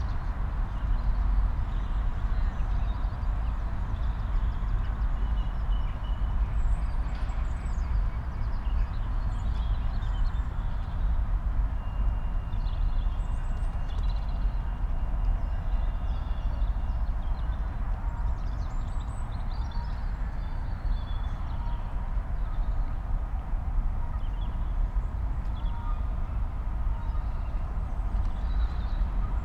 Oxford University Parks, Oxford, UK - distant rush hour
Oxford rush hour, heard from a distant position, in Oxford University Park, amplified.
(Sony D50, Primo EM172)